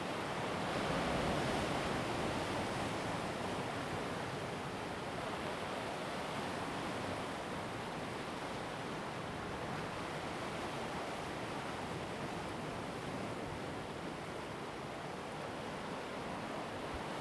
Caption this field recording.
Sound of the waves, Zoom H2n MS +XY